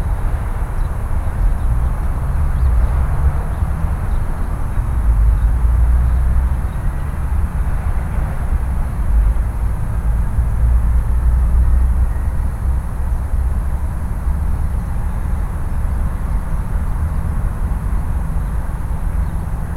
Ponton des Chantiers, Nantes, France - Calm on the edge of The Loire with urban sounds on background
Pays de la Loire, France métropolitaine, France, 27 March 2021